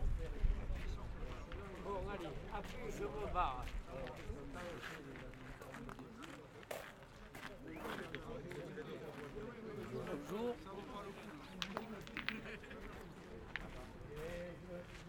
Rue de la Barbotière, Gujan-Mestras, France - Pétanque des anciens pêcheurs
Quand on revient vers la ville après avoir laissé derrière nous les cabanes de pêcheurs, je découvre de nombreux joueurs de pétanque. C'est sérieux. Nombreux ont les cheveux blancs et j'imagine sans peine qu'il s'agit d'anciens pêcheurs...
Enregistré pour le projet "Amusa Boca" produit par l'observatoire des imaginaires ruraux "Les nouveaux terriens".
Equipement : Zoom H6 et Built-in XY microphone
France métropolitaine, France